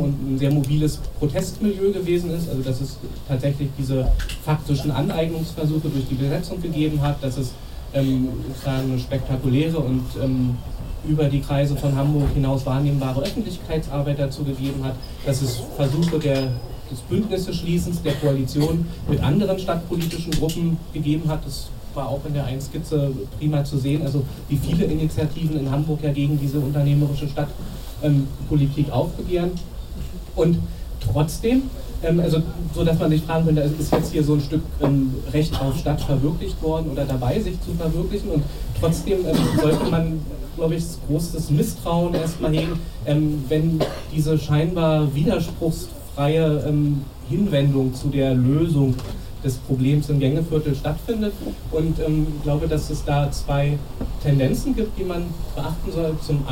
Dr. Andrej Holm. In welcher Stadt wollen wir leben? 17.11.2009. - Gängeviertel Diskussionsreihe. Teil 2
Mit der Besetzung des Gängeviertels entstand über Nacht eine selbstorganisierte „Traumstadt“ - mitten in Hamburg. Diese steht der Stadt des Ausschlusses und der Verdrängung, der Stadt des Höchstbieterverfahrens und der Stadt der Tiefgaragen, der Stadt des Marketings und der Stadt der Eventkultur entgegen. Das wirft die Frage auf, wie denn die Stadt eigentlich aussieht, in der wir alle leben wollen.
Darüber möchte die Initative „Komm in die Gänge“ eine lebhafte Diskussion in der Hamburger Stadtbevölkerung anregen, denn u.a. mit der Besetzung des Gängeviertels wurde die Frage zwar endlich auf die stadtpolitische Tagesordnung gesetzt, aber entsprechend unserer Forderung nach „Recht auf Stadt“ für alle, soll die Diskussion darüber vor allem von den StadtbewohnerInnen selber getragen werden.
Hamburg, Germany, 18 November 2009